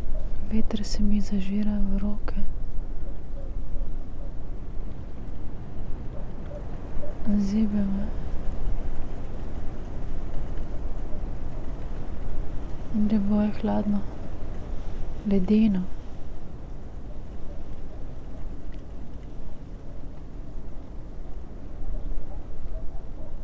tree crown poems, Piramida - snow, wind and umbrella